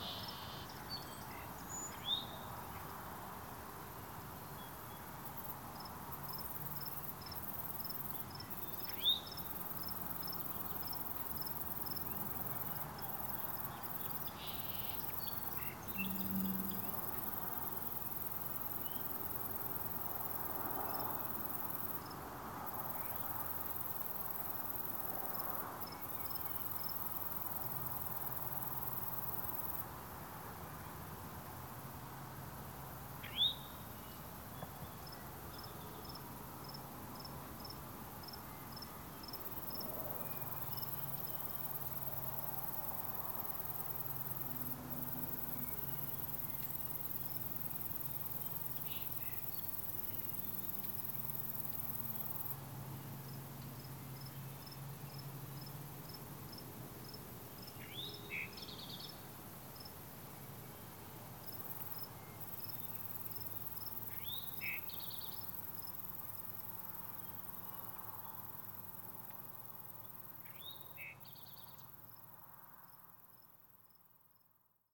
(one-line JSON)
{"title": "Markey Township, MI, USA - Houghton Lake Field Crickets", "date": "2014-07-18 17:05:00", "description": "Hot summer afternoon beside on a country road near Houghton Lake, Michigan. A few variety of what I call field crickets arise from the surrounding fields, joining a couple of singing birds further into the woods. A tiny bit of road noise from about 3/4 of a mile away, otherwise calm day and little interference. Just one take from a Tascam Dr-07, only edits are fade in/out and gain increase.", "latitude": "44.40", "longitude": "-84.72", "altitude": "350", "timezone": "America/Detroit"}